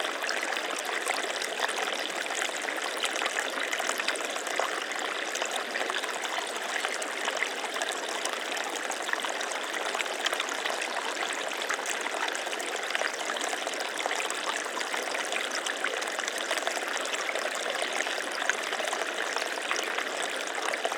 Champsecret, France - Chemin vers la rivière
We were two on this take, We wanted to make one take from the road through the forest path to get to the river.
France métropolitaine, France, 18 March 2021